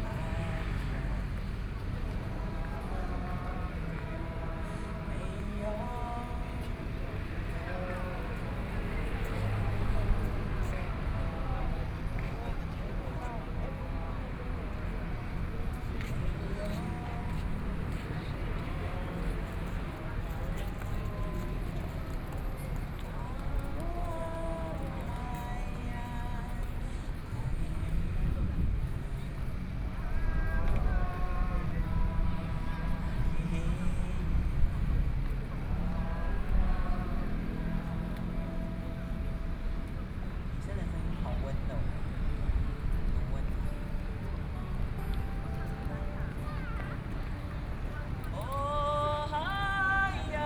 National Chiang Kai-shek Memorial Hall, Taipei - Antinuclear
Taiwanese Aboriginal singers in music to oppose nuclear power plant, Sing along with the scene of the public, Aboriginal songs, Sony PCM D50 + Soundman OKM II
台北市 (Taipei City), 中華民國, 6 September 2013, 20:55